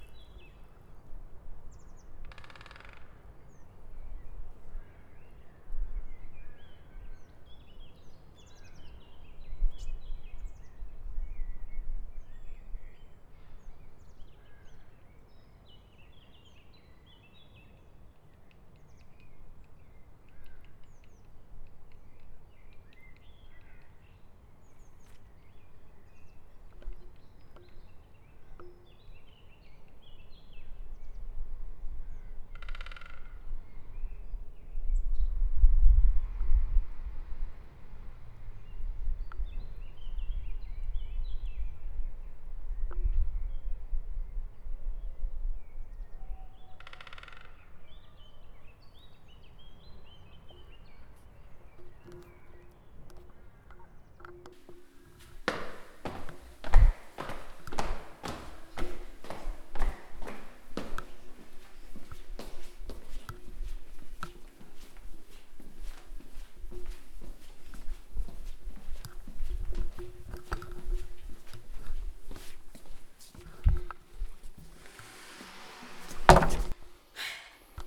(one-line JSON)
{"title": "Rue du Maquis, Strasbourg, France - Ecole Ampère - Birds at the back of the Schoolyard", "date": "2018-04-06 14:59:00", "description": "Some stork birds at the back of the schoolyard.", "latitude": "48.56", "longitude": "7.78", "altitude": "141", "timezone": "Europe/Paris"}